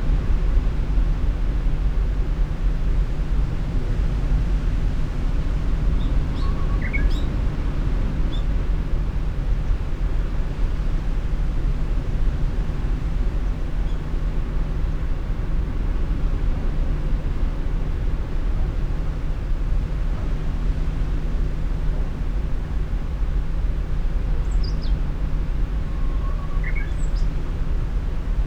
호도 small island, small birds, strong swell, heavy shipping
호도_small island, small birds, strong swell, heavy shipping...